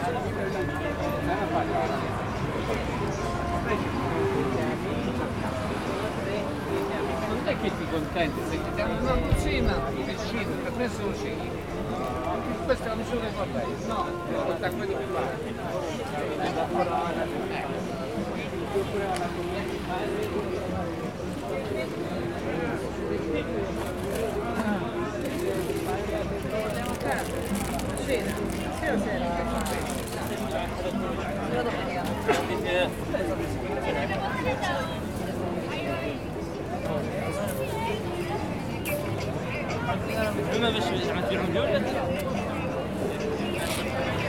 A brief recording of a bustling, colourful, friendly flea market in the Captial of Fuertaventura, Rosaario, Spain.